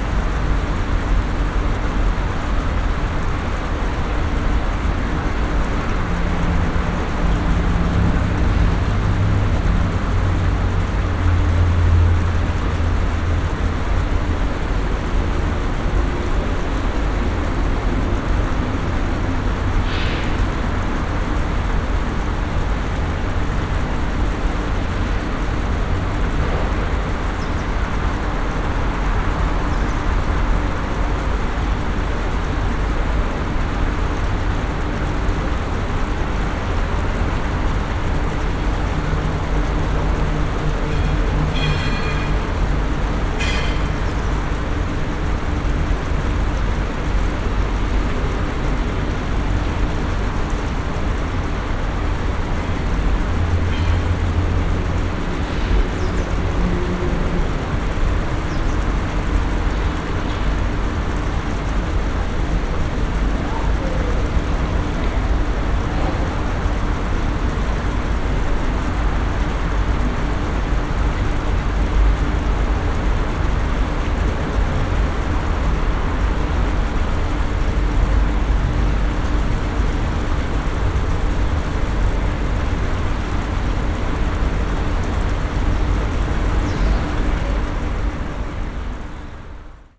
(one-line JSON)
{"title": "Athens, Metropolitan hotel - hotel yard", "date": "2015-11-06 09:57:00", "description": "(binaural) ambience of the hotel yard. a place meant to relax near a fountain in the back of the hotel. but there is a lot of traffic noise trapped here from a nearby expressway as well as from air conditioning units. it was rather impossible to relax and have a quiet conversation. (sony d50 + luhd pm-01)", "latitude": "37.94", "longitude": "23.70", "altitude": "9", "timezone": "Europe/Athens"}